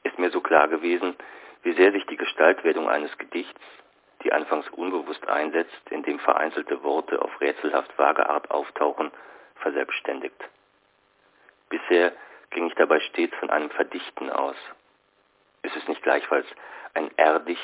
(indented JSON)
{
  "title": "himmel/worte/land (7) - himmel worte land (7) - hsch ::: 09.05.2007 11:35:22",
  "latitude": "48.54",
  "longitude": "-4.49",
  "altitude": "29",
  "timezone": "GMT+1"
}